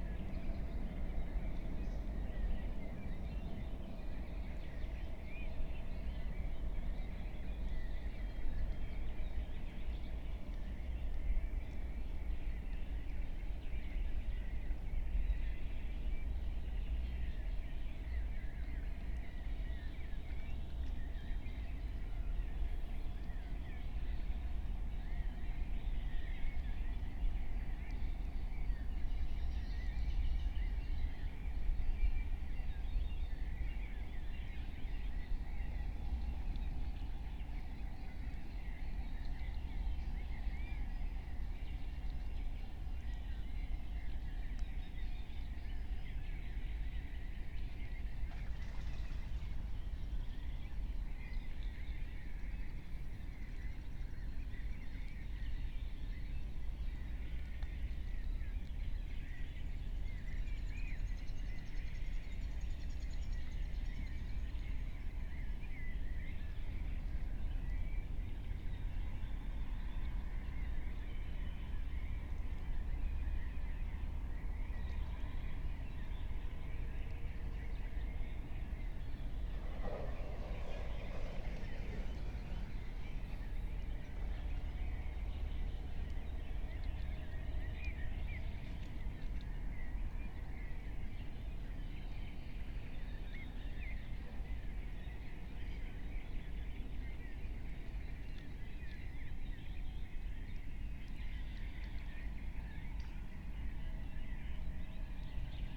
04:00 Berlin, Buch, Mittelbruch / Torfstich 1 - pond, wetland ambience
early morning ambience, a creature is investigating the hidden microphones again.
Deutschland, 15 May 2021